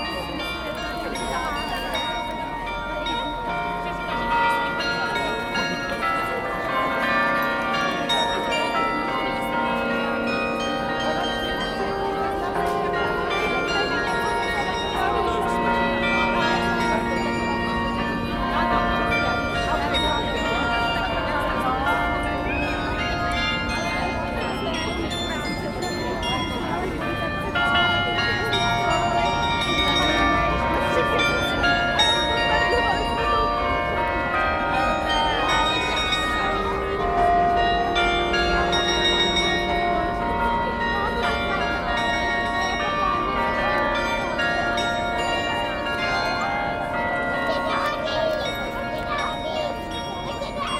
Prague, Czech Republic - zvonkohra / carillon
carillon during a ceremonial switching on of the christmas tree / zvonkohra počas slávnostného rozsvietenia vianočného stromu
26 November 2014, ~16:00